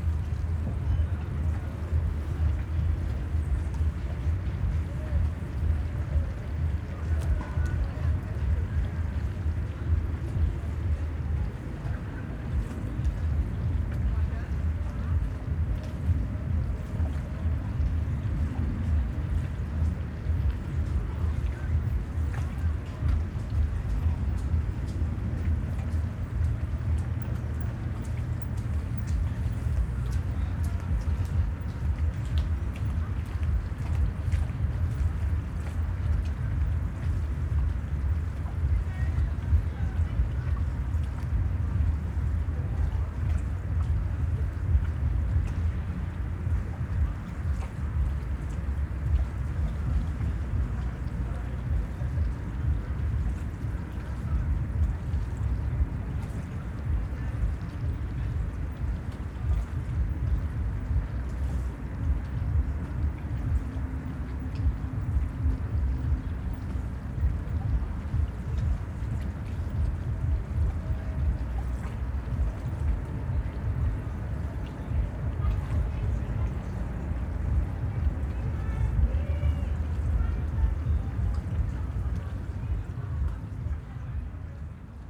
Insel der Jugend, Berlin, Deutschland - at the river Spree, weekend city hum
at the river Spree bank, Insel der Jugend (youth island). city hum, party boats, light waves. a typical summer weekend ambience river side. actually not very pleasant.
(Sony PCM D50, DPA4060)
Berlin, Germany, 18 July 2015